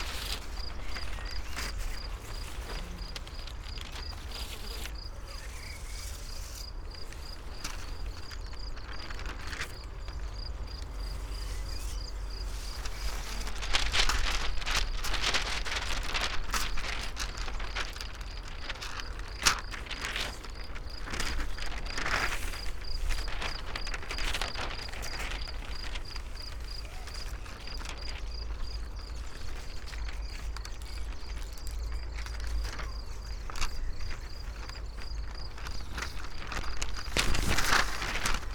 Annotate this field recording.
unfolded book, attached to the vineyard wires, wind playing them, another scroll lying in high grass